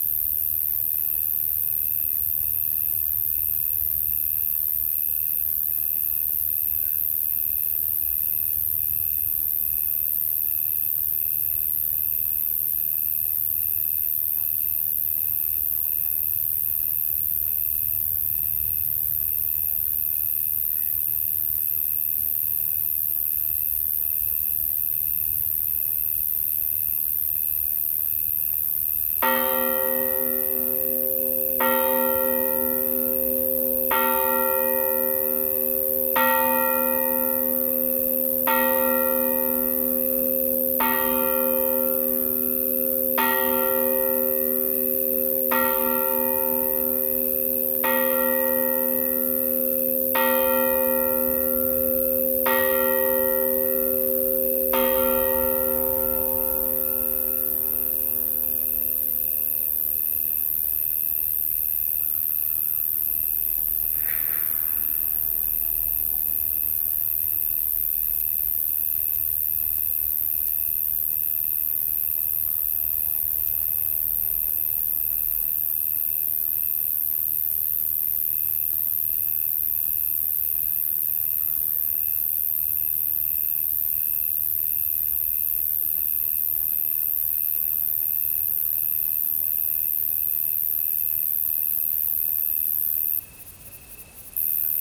SBG, Vilanova - Noche
Ambiente nocturno en el campo de Vilanova.
St Bartomeu del Grau, Spain, August 2011